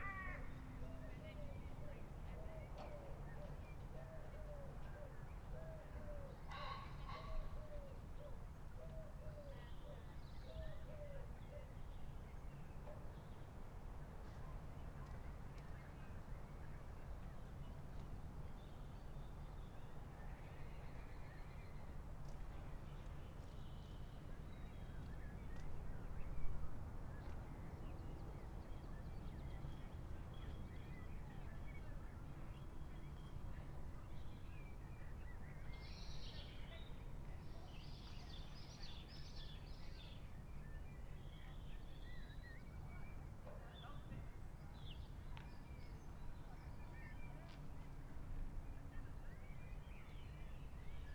{"title": "Unnamed Road, Malton, UK - NHS#clapforcarers ...", "date": "2020-04-02 19:54:00", "description": "NHS#clapforcarers ... people were asked to come out and clap for all the workers ... carers ... all those of the NHS ... at 20:00 ... a number of our small community came out to support ... SASS on tripod to Zoom H5 ...", "latitude": "54.12", "longitude": "-0.54", "altitude": "76", "timezone": "Europe/London"}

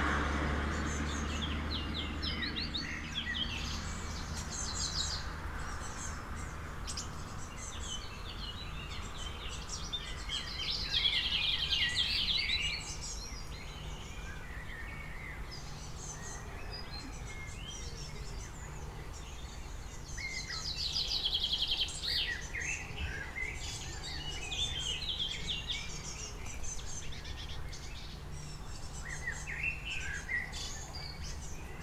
{"title": "Rte de L’Ia, Motz, France - oiseaux et grenouilles", "date": "2022-06-16 19:05:00", "description": "Base de loisir de Motz chants d'oiseaux coassements de grenouilles il y en a même une qui bondit sur la vase, quelques passages de voitures et d'un train en direction de Seyssel.", "latitude": "45.93", "longitude": "5.83", "altitude": "270", "timezone": "Europe/Paris"}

